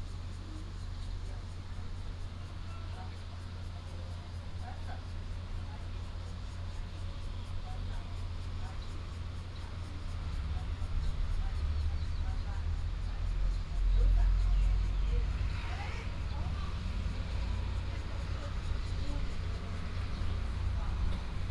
{"title": "金雞湖伯公, Pingzhen Dist., Taoyuan City - small village park", "date": "2017-08-14 18:24:00", "description": "In the park, birds sound, traffic sound, Athletic field, Small temple, Old man and his little grandson", "latitude": "24.89", "longitude": "121.23", "altitude": "202", "timezone": "Asia/Taipei"}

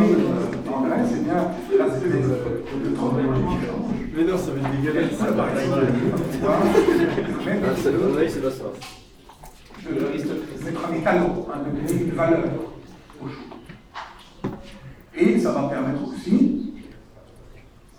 {"title": "Quartier du Biéreau, Ottignies-Louvain-la-Neuve, Belgique - Course of antic history", "date": "2016-03-11 15:35:00", "description": "A course of antic history, in the huge auditoire called Croix du Sud.", "latitude": "50.67", "longitude": "4.62", "altitude": "141", "timezone": "Europe/Brussels"}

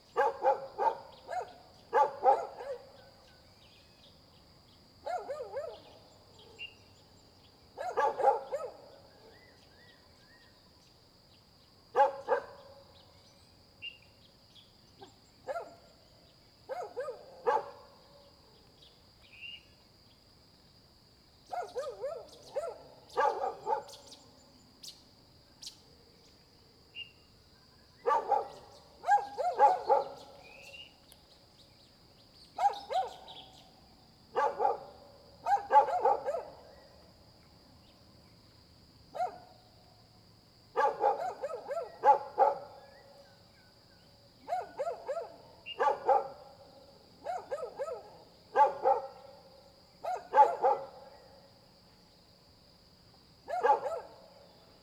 {"title": "水上, 桃米里埔里鎮 - Dogs barking and birds sound", "date": "2016-04-21 06:07:00", "description": "Dogs barking and birds sound\nZoom H2n MS+XY", "latitude": "23.94", "longitude": "120.92", "altitude": "564", "timezone": "Asia/Taipei"}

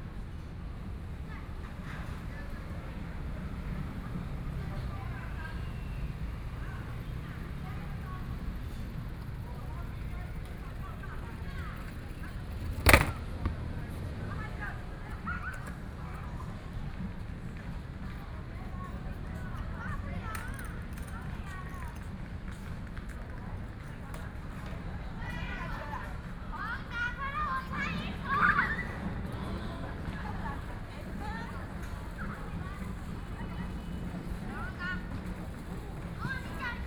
{"title": "Shuangcheng St., Taipei City - Children", "date": "2014-02-28 18:36:00", "description": "Children, Traffic Sound\nPlease turn up the volume a little\nBinaural recordings, Sony PCM D100 + Soundman OKM II", "latitude": "25.06", "longitude": "121.52", "timezone": "Asia/Taipei"}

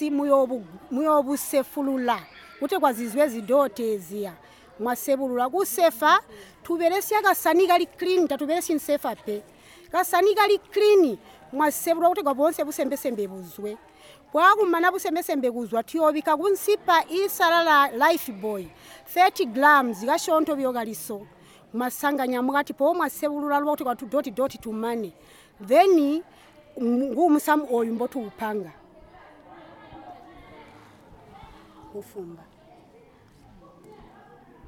{"title": "Mweezya Primary School, Sinazongwe, Zambia - Cleopatra's Chemistry...", "date": "2016-08-23 10:45:00", "description": "Cleopatra of Mweezya Women’s Club gives us an impressively detailed presentation about the women’s production of organic pesticides… this will be one of the recordings, which we later take on-air at Zongwe FM in a show with DJ Mo...", "latitude": "-17.26", "longitude": "27.37", "altitude": "506", "timezone": "GMT+1"}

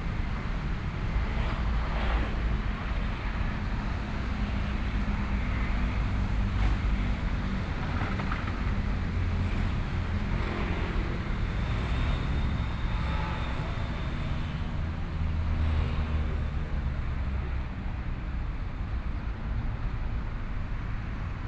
{"title": "陳中和墓園, Kaohsiung City - in the Park", "date": "2014-05-15 17:08:00", "description": "in the Park, Traffic Sound, In the cemetery, Also monuments, now is also a park", "latitude": "22.63", "longitude": "120.33", "altitude": "13", "timezone": "Asia/Taipei"}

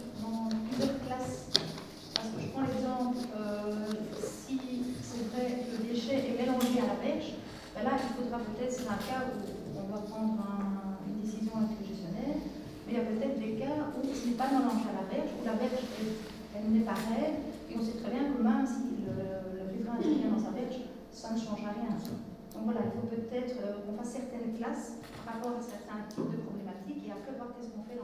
{"title": "Chaumont-Gistoux, Belgique - Colloquium", "date": "2016-12-16 10:25:00", "description": "A conference is made about the enormous garbage deposits on the river banks in Brabant-Wallon district.", "latitude": "50.70", "longitude": "4.66", "altitude": "71", "timezone": "GMT+1"}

